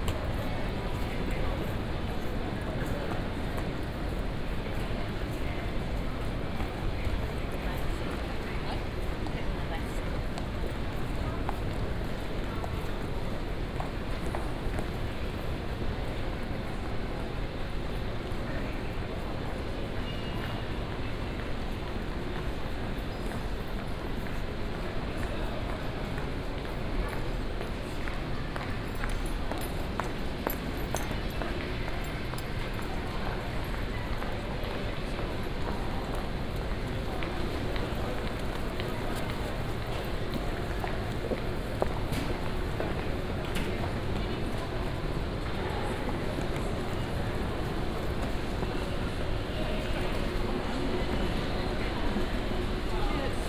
London Heathrow Airport (LHR), Terminal, Greater London - elevator, terminal ambience
London Heathrow airport, Terminal 5, waiting for departure, walking around
(Sony D50, OKM2)
16 March 2014, ~15:00